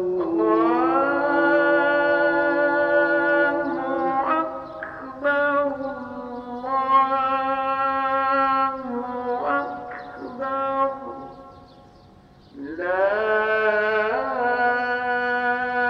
Mosquées de Jaww - Bahrain - appel à la prière de 18h28
Enregistrement de l'appel à la prière des 2 mosquées de la ville.
المحافظة الجنوبية, البحرين, 6 June 2021